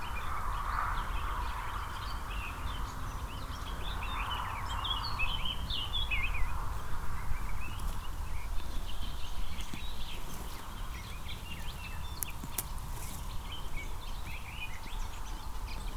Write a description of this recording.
Two sheeps eating grass on a meadow. The birds in the distant trees, the drone of the traffic coming in and out with the mellow wind movements. Recorded in early spring in the early evening time. Hoscheid, Schafe auf einer Wiese, Zwei Schafe essen Gras auf einer Wiese. Die Vögel in den fernen Bäumen, das Dröhnen des Verkehrs kommt und geht mit den sanften Windbewegungen. Aufgenommen im Frühjahr am frühen Abend. Hoscheid, moutons dans une prairie, Deux moutons broutant de l’herbe sur une prairie. Les oiseaux dans les arbres dans le lointain, le bourdonnement du trafic entrant et sortant avec les doux mouvements du vent. Enregistré au début du printemps, en début de soirée. Projekt - Klangraum Our - topographic field recordings, sound sculptures and social ambiences